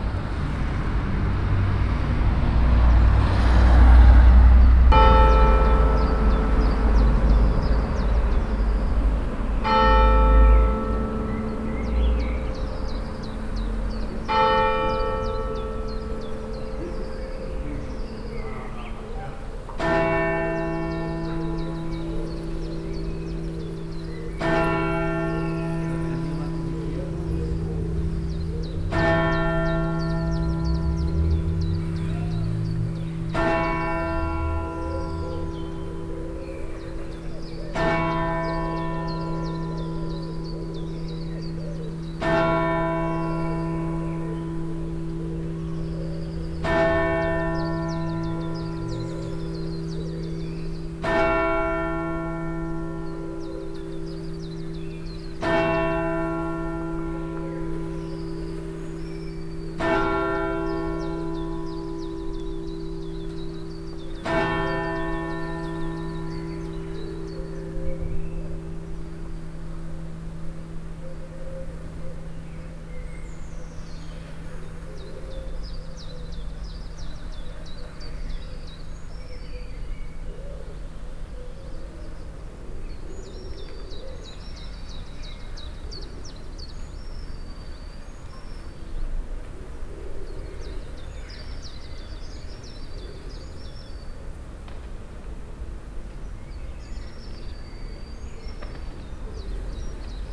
lingering on the old brig walls in the sun for a while, amazed of the quiet street and the sounds of flies gathering here in the warmth… the church is closed, no Easter gatherings here...